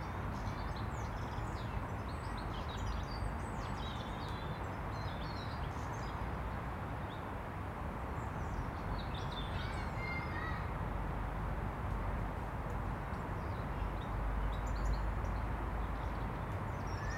The Poplars Roseworth Avenue The Grove Stoneyhurst Road West Stoneyhurst Road The Quarry Park
Above the traffic noise of Matthew Bank
two women watch their children play
A man limps slowly by
perhaps anticipating icy steps to come
Blackbirds toss the leaf litter
beneath the ash trees
Small birds move through the trees and bushes
on the other side of the park
England, United Kingdom